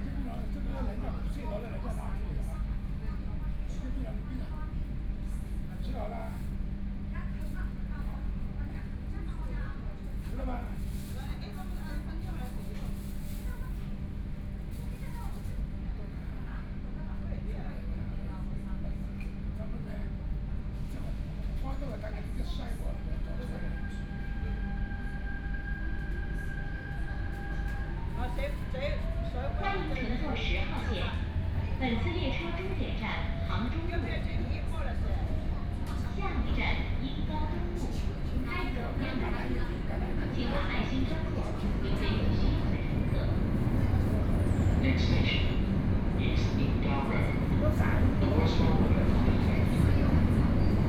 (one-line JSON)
{"title": "Yangpu District, Shanghai - The elderly and children", "date": "2013-11-25 12:52:00", "description": "The elderly and children, from Xinjiangwancheng station to Wujiaochang station, Binaural recording, Zoom H6+ Soundman OKM II", "latitude": "31.32", "longitude": "121.50", "altitude": "7", "timezone": "Asia/Shanghai"}